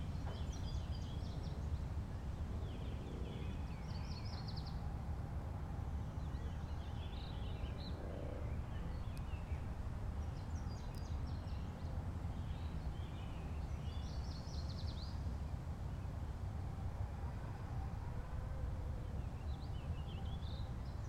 Washington Park, South Doctor Martin Luther King Junior Drive, Chicago, IL, USA - Summer Walk 4
Recorded with Zoom H2. An Interactive walk through Washington Pk.
Illinois, United States of America